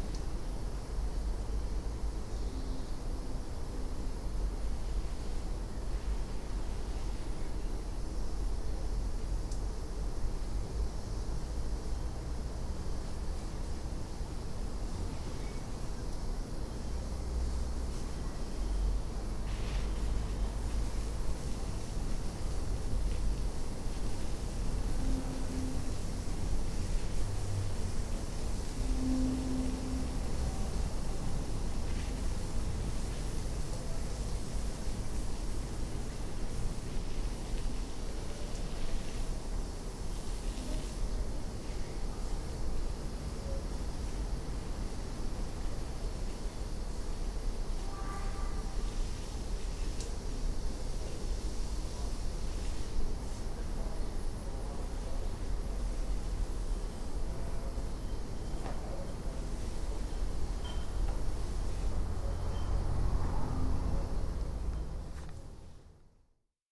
Behind Hart Street, Edinburgh, Edinburgh, UK - Watering the gardens behind the house where Catherine Hogarth was born
This is the sound of a small, green enclave behind the houses of Hart Street. The land is bordered on all sides by tall, Georgian buildings, and divided up into individual gardens. I was on the trail of Catherine Hogarth - the woman who later became Catherine Dickens when she married Charles Dickens - when I found this little patch of green. Catherine was born at 8 Hart Street but, as we learned from a knowledgeable resident, all the houses on the even numbered side of this street were joined together to form a hotel, then divided up again into private residencies, so "Number 8" no longer exists! In trying to hear where Catherine played or grew up as a young girl, the atmosphere of this small green patch and the general ambience of the street is the closest I may get.
22 March 2016